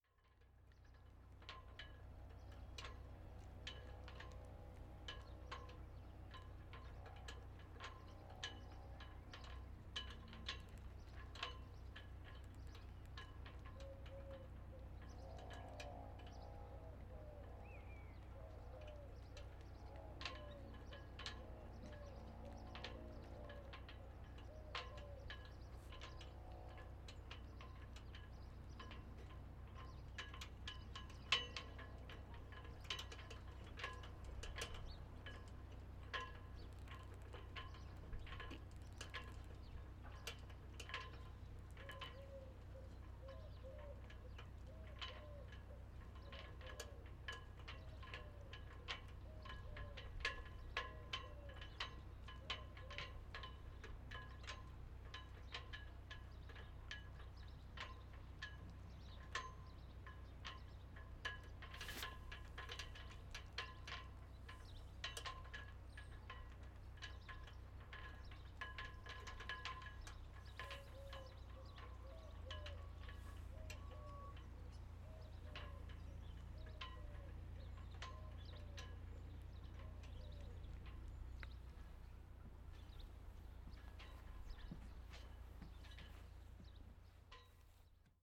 Av. des Pêcheurs, La Teste-de-Buch, France - Haubans joués par les vents dans le port
C'est un matin, il fait beau et un léger vent marin fait bouger les haubans d'un voilier. Je pars à sa rencontre et l'enregistre m'étonnant de donner une intention à ces vents qui joueraient du hauban de ce voilier. Cet enregistrement a été réalisé dans le cadre du projet "Amusa Boca" pour l'observatoire "Les nouveaux terriens".
Enregistreur : zoomH6 et built-in XY microphone
France métropolitaine, France, 9 March